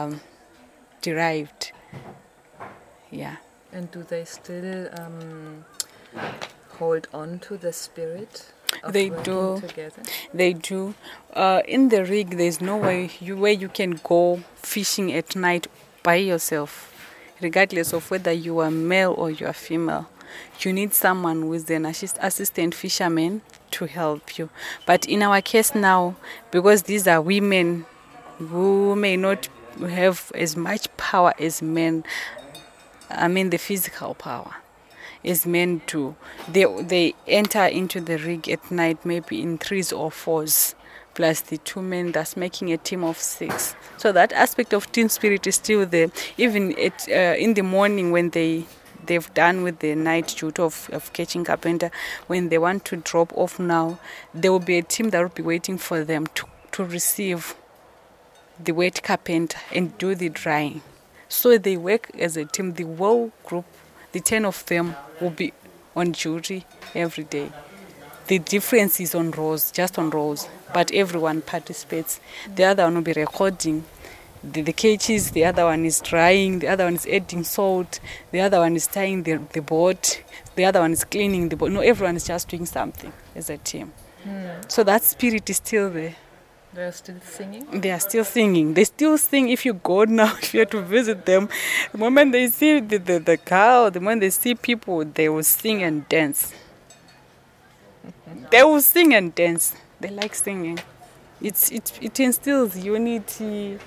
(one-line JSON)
{"title": "Office of Basilwizi Trust, Binga, Zimbabwe - Abbigal tells about BaTonga women...", "date": "2012-11-09 12:25:00", "description": "We are sitting with Abbigal Muleya outside Basilwizi Trust’s Office in Binga, some voices from people working inside, and a herd of cows passing… the midday breeze is a pleasant cooling, unfortunately though it occasionally catches the mic…\nAbbigal describes for listeners the spirit of unity and the concept of team-working among the BaTonga women she is working with, be it in pursuing traditional women’s craft like basket-weaving, or recently in an all-women fishery project. Abbigal is one of the founder members of ZUBO Trust, an organization aiming to enable women to realize, enhance and maximize their social, economic and political potential as citizens of Zimbabwe.", "latitude": "-17.62", "longitude": "27.34", "altitude": "621", "timezone": "Africa/Harare"}